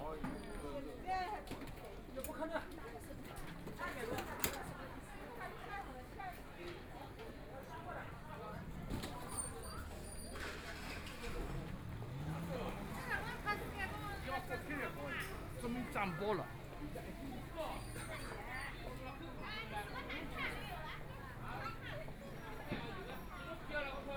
Walking through the streets in traditional markets, Binaural recording, Zoom H6+ Soundman OKM II